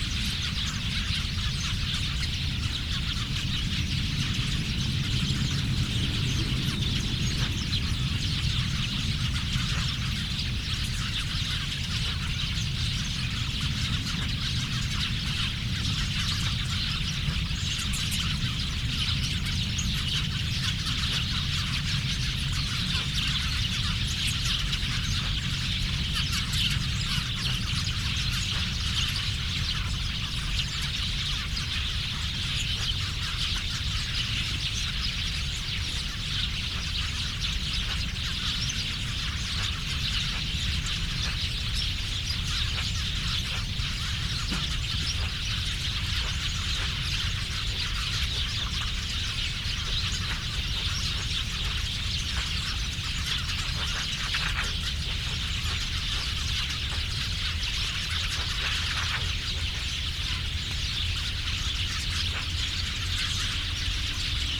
großbeeren, heinersdorf: mauerweg - borderline: berlin wall trail
elder tree attracting various birds (more and more and more)
borderline: october 1, 2011